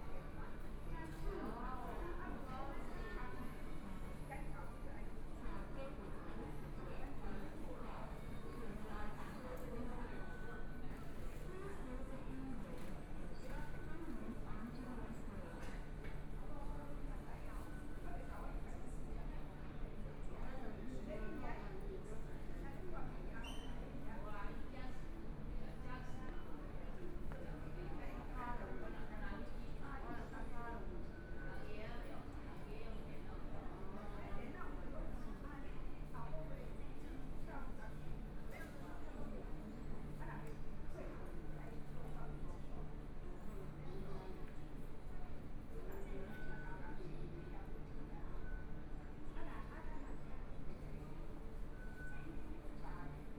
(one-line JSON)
{"title": "Shuanglian Station, Taipei - walking in the Station", "date": "2014-02-06 14:50:00", "description": "walking in the Station, Binaural recordings, Zoom H4n+ Soundman OKM II", "latitude": "25.06", "longitude": "121.52", "timezone": "Asia/Taipei"}